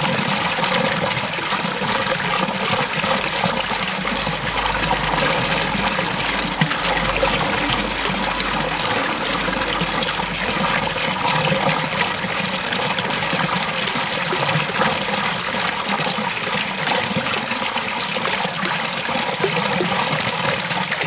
Schiltach, Deutschland - Schiltach, fountain
At the main street listening to the sound of a more modern 70's style fountain. Parallel some cars passing by.
soundmap d - social ambiences, water sounds and topographic feld recordings